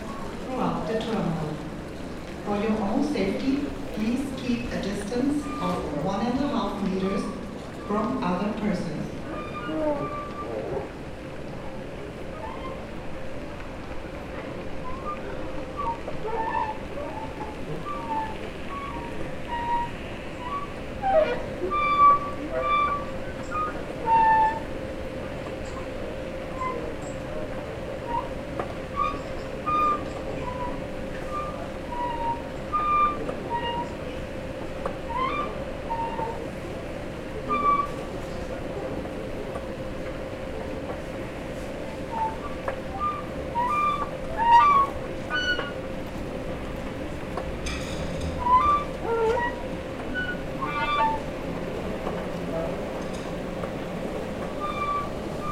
Hugo-Eckener-Ring, Frankfurt am Main, Deutschland - Escelator noises Corona Anouncements
The airport is rather busy, especially the passage between train station and airport. An escelator could need some oil, but then the beautiful noises will vanish. There are a lot of anouncements asking the passengers to stick to the Covid-19-regulations.
Hessen, Deutschland